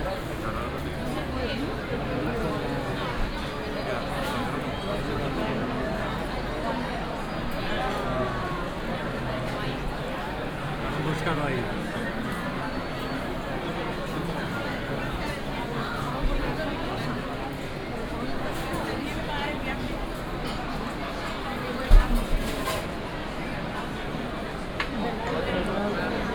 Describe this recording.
(binaural) Entering and walking around the San Miguel market. the hall is packed. people getting their tapas, paellas, calamari sandwiches, sweets, coffees, wines, whatsoever and dining at the tables, talking, having good time.